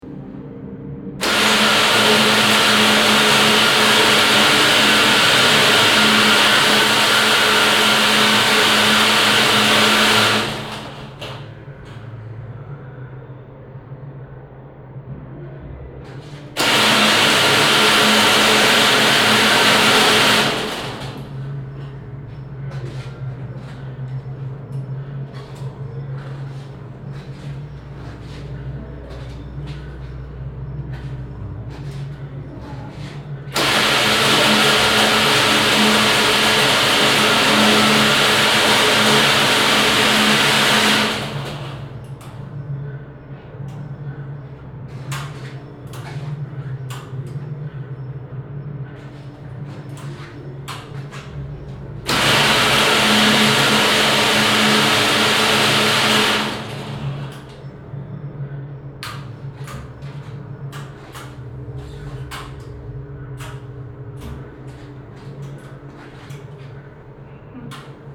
Altstadt-Nord, Köln, Deutschland - Cologne, Museum Ludwig, machines by Andreas Fischer
Inside the museum in the basement area - during an exhibition of sound machines by artist Andreas Fischer. Here a room with a big metal shelf in the center. On the shelf are placed a bigger number of small motors that start to move and rattle triggered by the visitors motion. In the backgound the sound of other machines and visitors.
soundmap nrw - social ambiences, topographic field recordings and art places